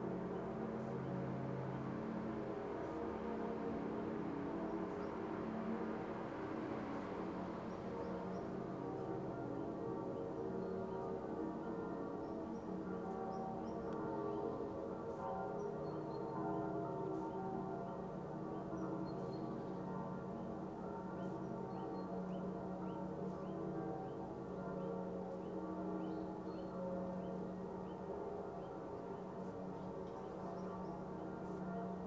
Hildesheim, Deutschland - Kirchengeläut zweiter Advent
Das Kirchengeläut zum zweiten Advent mischt sich zu einem einzigen Klang, gehört von oberhalb der Stadt. Unterbrochen durch den 10-Uhr-Schlag der Moritzberger Kirchen. Strahlend blauer Himmel, etwa 0°C und Raureif.
Church bells on second advent mixed into one sound, heard from above the city. Clear blue sky, around 0°C, hoarfrost.
Recording: Zoom H2
Hildesheim, Germany, 4 December 2016